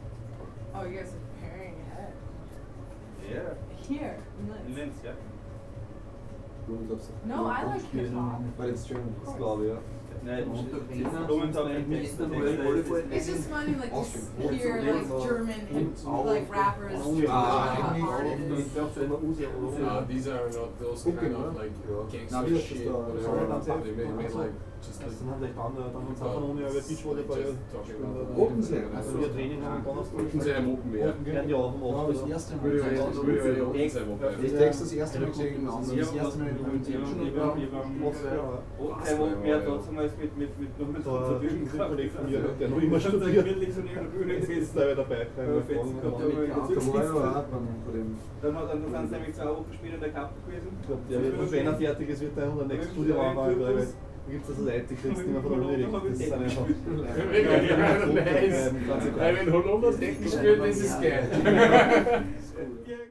Alt-Urfahr, Linz, Österreich - sonnenstein-buffet
sonnenstein-buffet, linz-urfahr